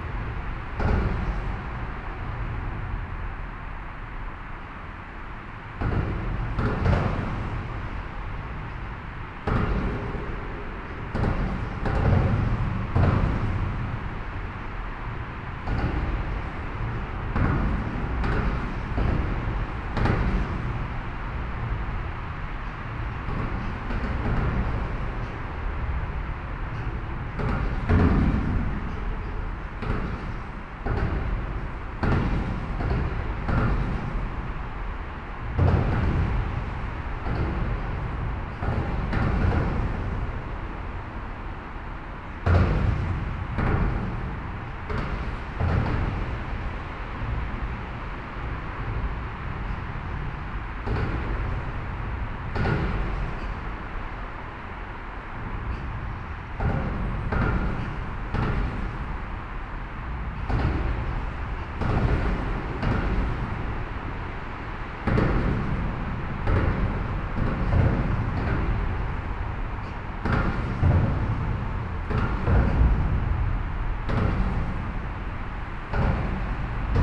Bruxelles, Belgium - Vilvoorde viaduct
Sound of the Vilvoorde viaduct below the bridge.